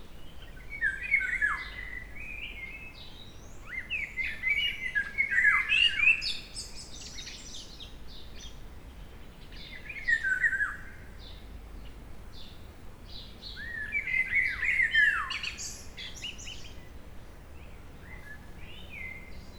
Diraki, Srdoci, blacbirds
Blackbirds, summer time.
recording setup: M/S(Sony stereo condenser via Sony MD @ 44100KHz 16Bit